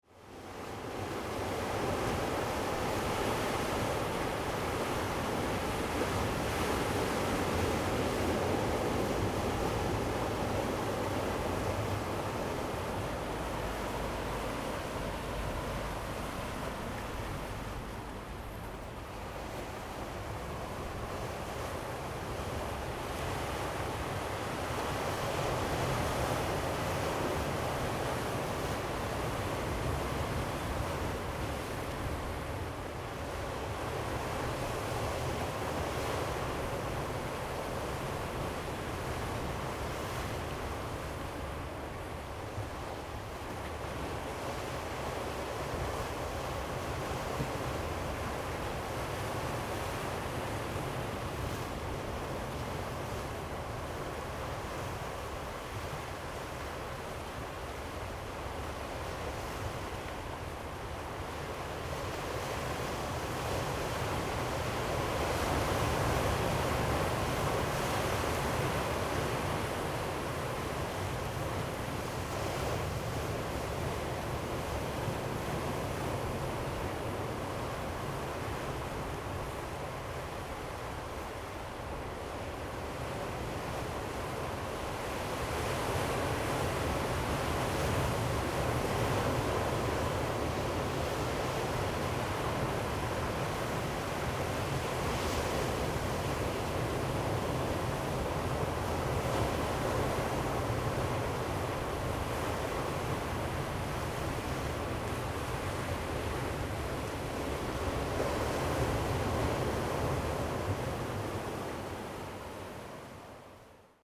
八嗡嗡, Chenggong Township - Standing on the rocky shore
Standing on the rocky shore, Sound of the waves, Very hot weather
Zoom H2n MS+ XY